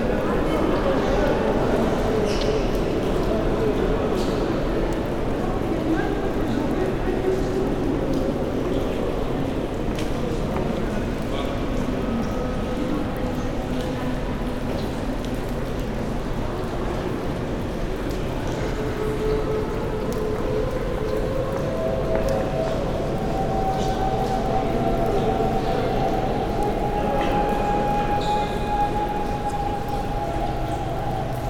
Basilica Cistern or Yerebatan Sarayi, Roman water supply from 532 AD. Unfortunately they play music inside for tourists